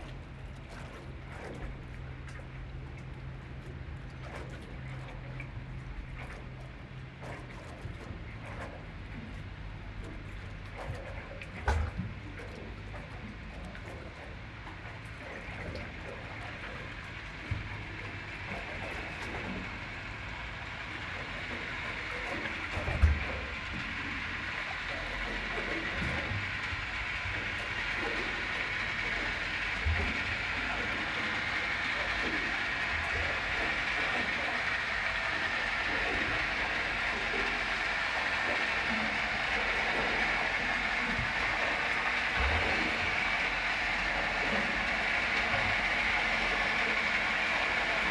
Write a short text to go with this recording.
Standing on the river bank watching the boats pass the sound they make underwater is inaudible. However, it is loud and often strident. This recording uses a hydrophone and normal microphones. The track starts above water and slowly crossfades below the surface. Water slopping against the pier is heard from both, albeit differently, but the sound of the boats only underwater. Coots call at the beginning.